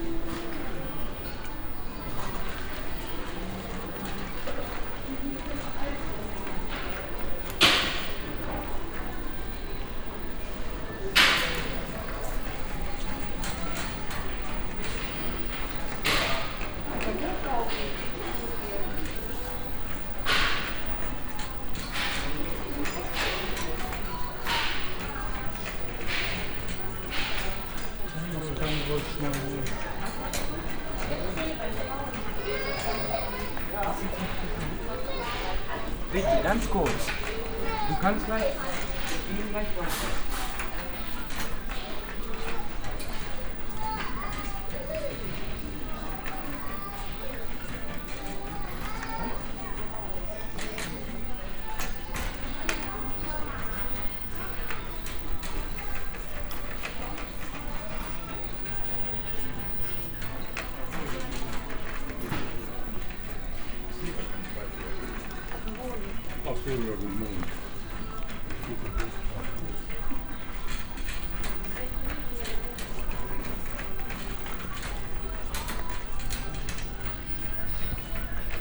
cologne, butzweilerhof, inside a swedish furniture market hall
not visible on the map yet - new branch house of a swedish furniiture company - here atmo in the market hall plus speaker advertisment and muzak ambience
soundmap nrw: social ambiences/ listen to the people in & outdoor topographic field recordings
5 July